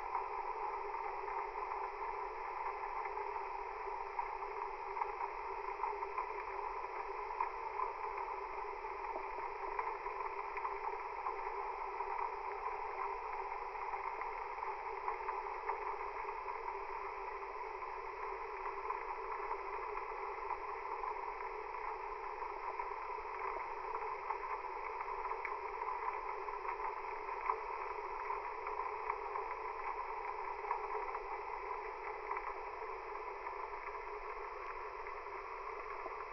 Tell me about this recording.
Strandbad, Rhein, Unterwasser, Schiffsmotor